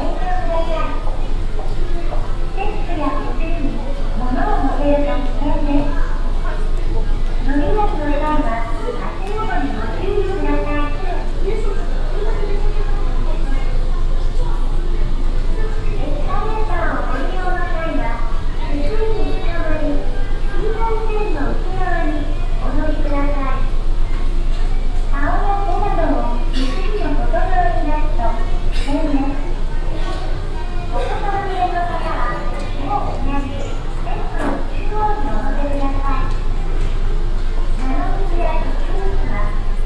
yokohama, colette mare, sale
Inside the shopping mall called colette mare. The sounds of different japanese female voices offering products and people who are shopping.
international city scapes - topographic field recordings and social ambiences
Japan